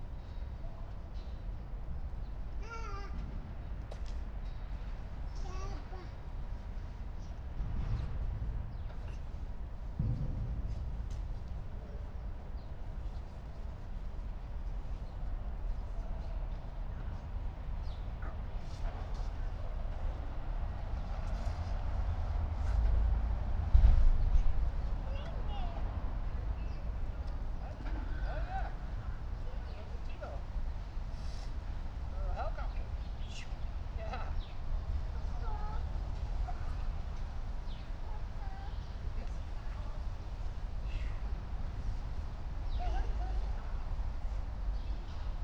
Schinkestraße, Berlin - playground ambience
playground Schinkestr. ambience in pandemic lockdown
(Sony PCM D50, AOM5024)
Berlin, Germany, 28 December 2020, 10:55